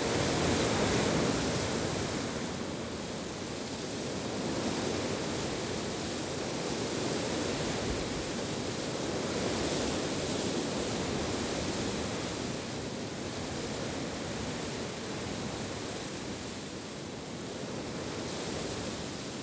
Long Lane, Ackworth, West Yorkshire, UK - Strong wind in dry oak leaves